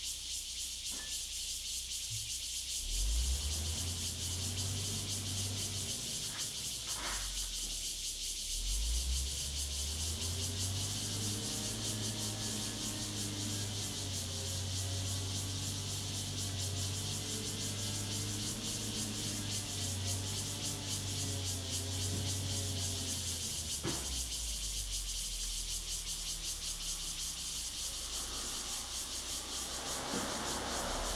Sec., Zhonghua Rd., Luye Township - Cicadas and Traffic Sound
Cicadas sound, Birdsong, Traffic Sound, Small village, Near the recycling plant
Zoom H2n MS+ XY
Luye Township, Taitung County, Taiwan, 7 September 2014, 09:28